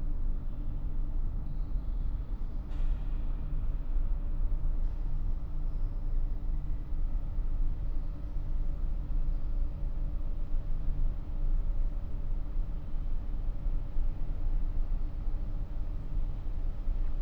Krematorium Berlin-Baumschulenweg - hall ambience
place revisited, no visitiors or service today, but an air conditioner or a similar device is humming. room tone and ambience, seems the wind outside moves things inside, not sure
(SD702, MKH8020 AB60)
Berlin, Germany, 12 September 2018, ~14:00